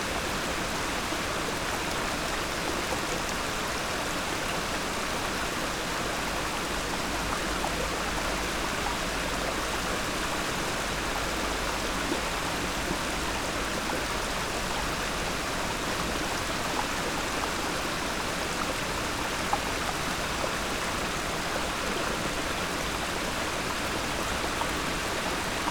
Pakruojis, Lithuania, under the bridge
waterflow under the stony bridge